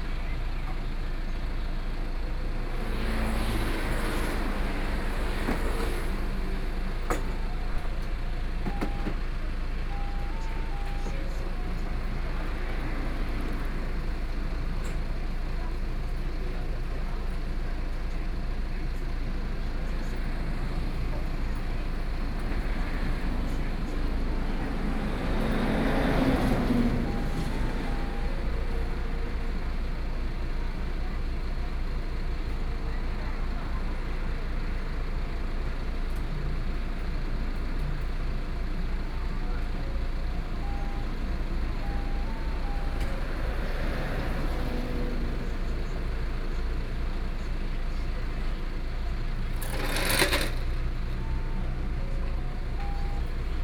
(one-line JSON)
{"title": "Su'ao Township, Yilan County - In front of the convenience store", "date": "2014-07-28 13:34:00", "description": "In front of the convenience store, At the roadside, Traffic Sound, Hot weather", "latitude": "24.60", "longitude": "121.83", "altitude": "16", "timezone": "Asia/Taipei"}